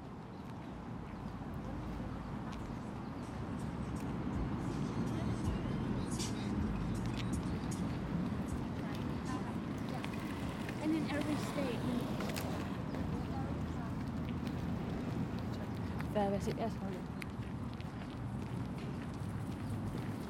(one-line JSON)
{"title": "Greenlake Park, Seattle Washington", "date": "2010-07-18 12:45:00", "description": "Part four of a soundwalk on July 18th, 2010 for World Listening Day in Greenlake Park in Seattle Washington.", "latitude": "47.67", "longitude": "-122.34", "altitude": "52", "timezone": "America/Los_Angeles"}